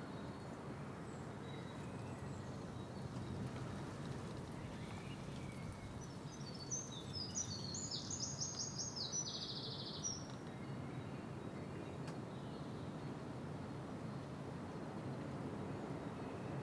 Contención Island Day 82 inner west - Walking to the sounds of Contención Island Day 82 Saturday March 27th
The Poplars High Street Graham Park Road Elmfield Approach
Along the ginnel
ivy winds through a wall-top fence
a wren sings
Wall top moss
red brown and green strokes
painted on mortar lime
Pitted surfaces of the wall’s stone
signature
of the mason’s pick
England, United Kingdom, March 2021